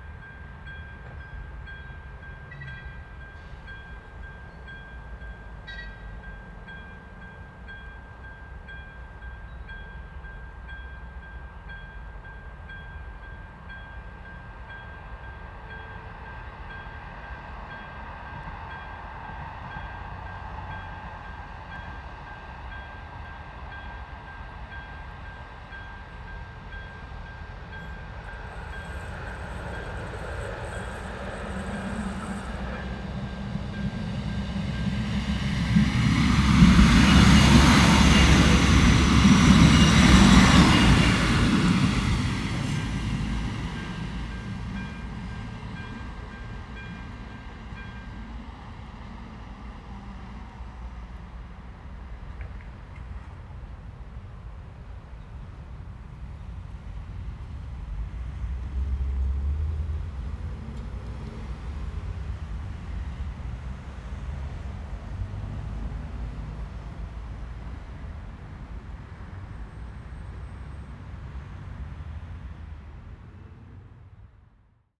Level crossing bells and train, Údolní, Praha, Czechia - Level crossing bell and 2 trains
Trains stop at Braník Station 4 times per hour - not so often. But on every occasion they are accompanied by the level crossing bell ringing when the barriers descend to stop the traffic. They stop ringing immediately after the train has passed. Traffic starts again.
Praha, Česko, April 2022